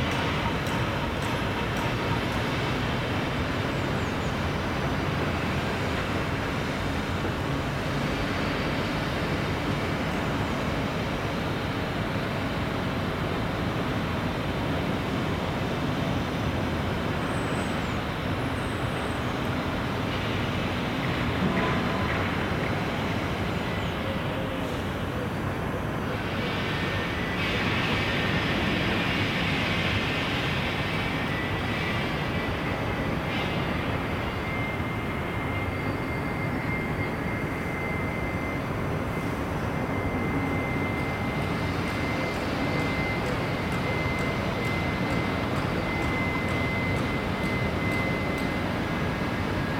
Rue du Mont St Martin, Liège, Belgique - City ambience from a rooftop
Bells in the distance, many construction sites nearby, a few birds.
Tech Note : Ambeo Smart Headset binaural → iPhone, listen with headphones.
Wallonie, België / Belgique / Belgien, 9 March 2022, 09:58